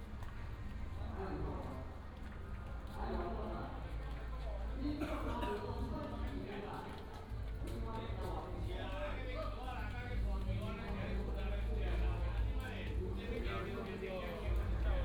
Baixi, Tongxiao Township - Walk in the alley
Walk in the alley, Matsu Pilgrimage Procession, Crowded crowd